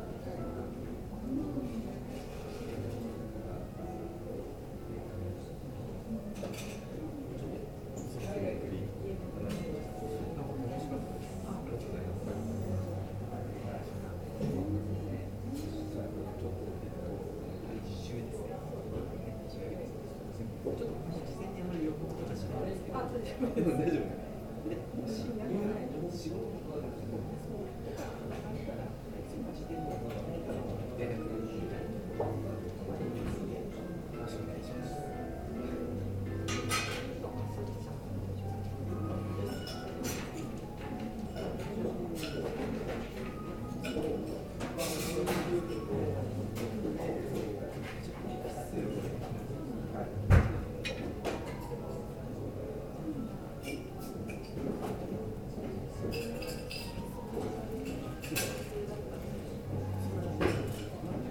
The 38th Floor of the Mandarin Oriental Hotel, Chome Nihonbashimuromachi, Chūō-ku, Tōkyō-to - Listening in the Oriental Lounge, 38 floors above street level
Below the lounge where this was recorded, there was a pianist playing live and a water feature. You can hear the sounds of the piano drifting up to where we sat; the view across the city from so high was so amazing I decided to just sit and look and listen (and record). The cooking sounds are coming from the Molecular Tapas Bar where micro-gastronomic treats are served each night to small groups of just 8 people at a time. You can also hear other people talking, drinks being served, and something of the high-glass/plush-lined interior of this insanely opulent place.
Chūō-ku, Tōkyō-to, Japan, 14 February 2017, 20:40